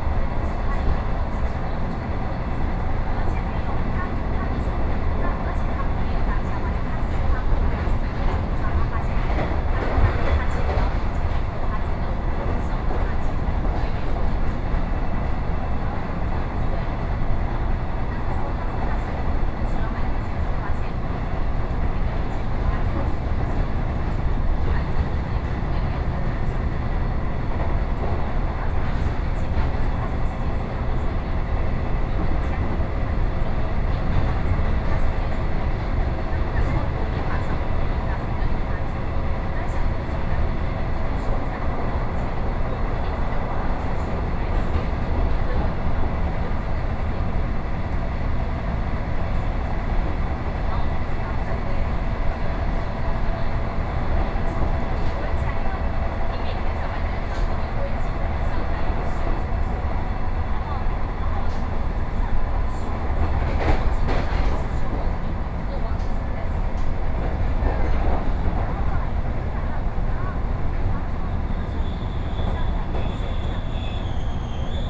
Taoyuan - In the compartment
from Yangmei Station to Fugang Station, Sony PCM D50+ Soundman OKM II
Taoyuan County, Taiwan, 14 August 2013